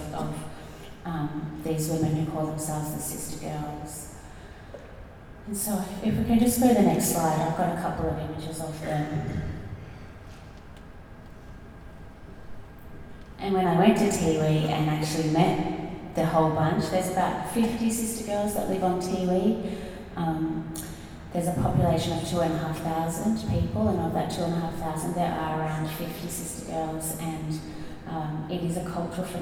neoscenes: Talking Blak - Tony Birch - neoscenes: Talking Blak - Bindi Cole